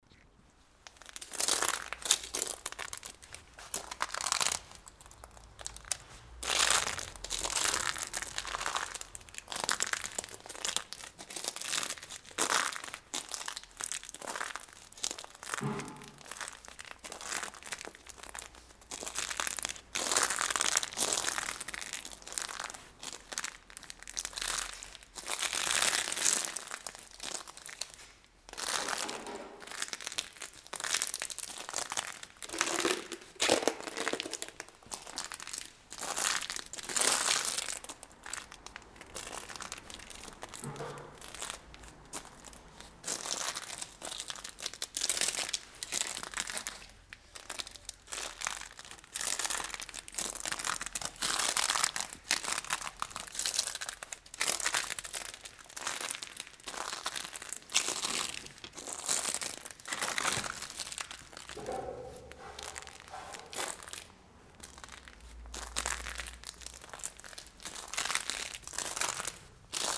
Seraing, Belgium - Dead painting
Into a huge abandoned factory, the floor tile is coated with dead painting, coming from the walls and the ceiling. It makes a lot of scales. I'm walking on it.